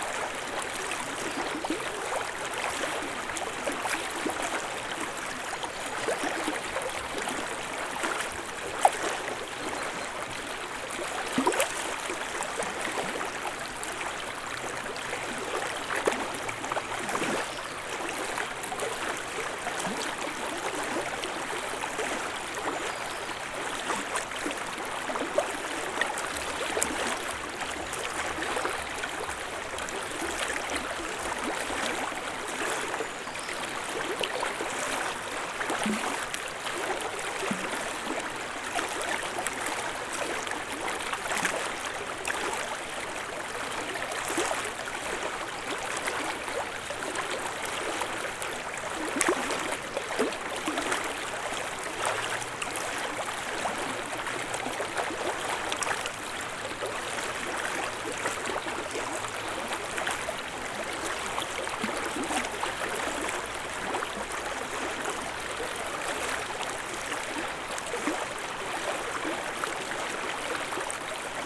Close-up recording of Vilnelė river shore. Recorded with ZOOM H5.